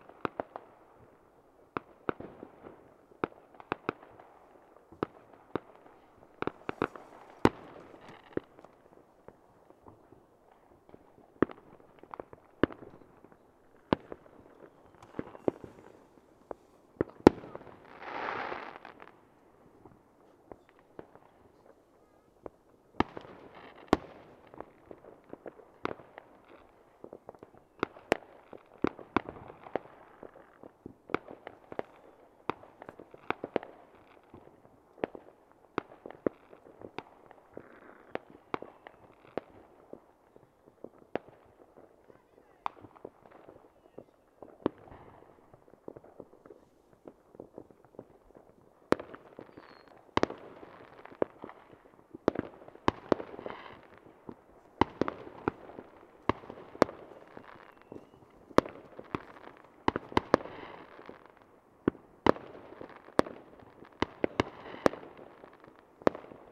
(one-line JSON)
{"title": "Olsztyn, New Year - New Year fireworks", "date": "2008-01-01", "description": "New Year celebration. Fireworks, people shouting. Some talks near microphone.", "latitude": "53.77", "longitude": "20.44", "altitude": "118", "timezone": "Europe/Warsaw"}